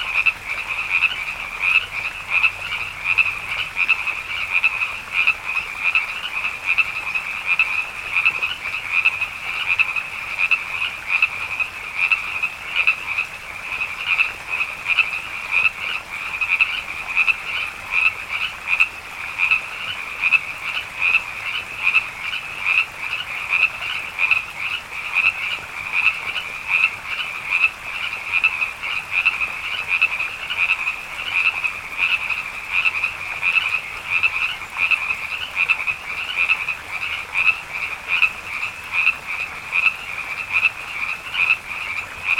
Nichols Canyon Rd, Los Angeles, CA, USA - Frogs in Spring
Quiet evening in the Hollywood Hills... aside from the frogs. Tried a few different mic techniques. Binaural turned out the best.